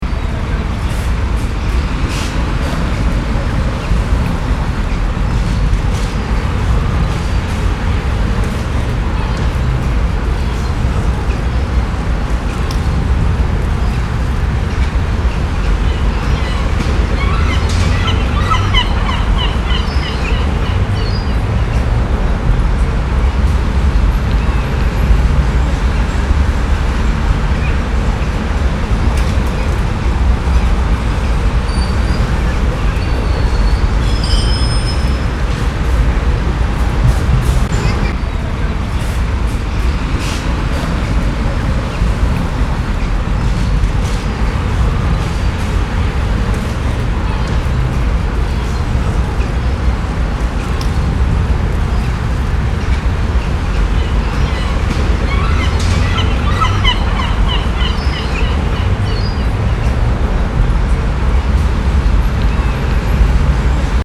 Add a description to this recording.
Nagranie ruchu ulicznego. Spacery dźwiękowe w ramach pikniku Instytutu Kultury Miejskiej